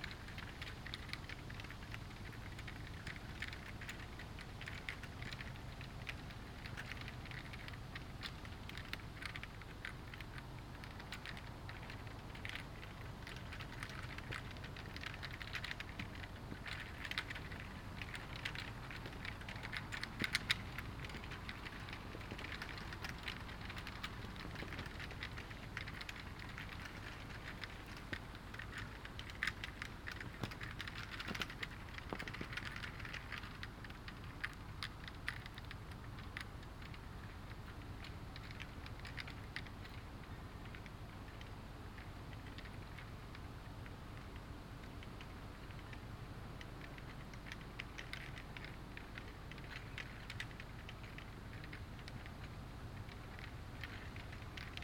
Eckernförder Str., Kiel, Deutschland - Flags in the wind
Flags on poles in the wind at night on a street, distant humming noise of traffic. Binaural recording, Zoom F4 recorder, Soundman OKM II Klassik microphone